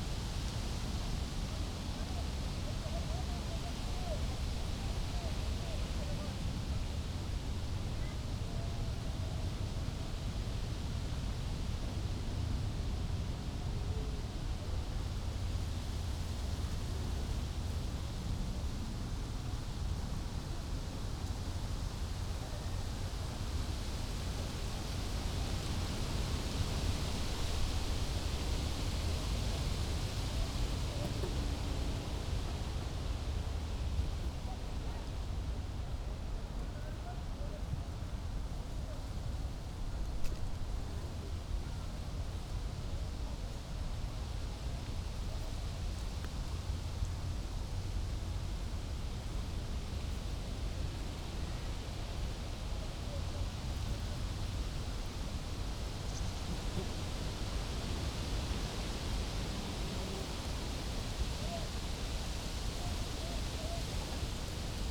Tempelhofer Feld, Berlin, Deutschland - summer afternoon ambience
at the poplar trees, summer Sunday afternoon ambience with wind and lots of human activity in a distance
(Sony PCM D50, Primo EM172)